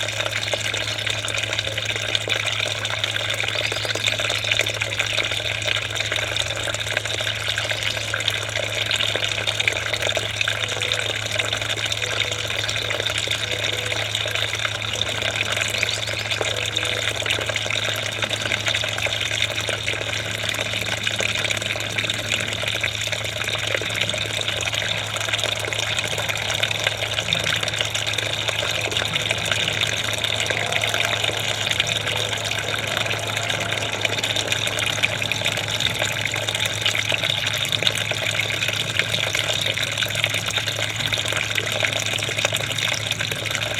Basbellain, Luxemburg - Basbellain, garden fountain
Im hinteren Garten eines Bauerhofes. Der Klang eines kleinen Gartenbrunnens. Ganz im Hintergrund das Geräusch vorbeifahrender Züge auf der nahe gelegenen Bahnstrecke.
Inside the backyard garden of a farmhouse. The sound of a small garden fountain. In the background you can hear the sound of trains passing by on the nearby railway tracks.